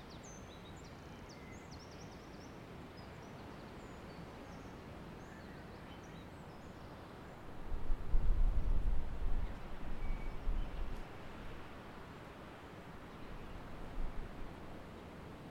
Rinckenhof, Zweibrücken, Deutschland - Sunday Morning
metalabor Wintercamp auf dem Rinckenhof / Zweibrücken